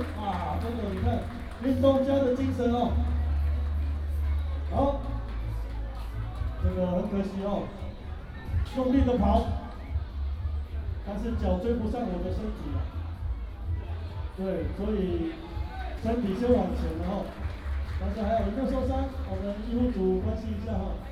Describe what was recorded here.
School and community residents sports competition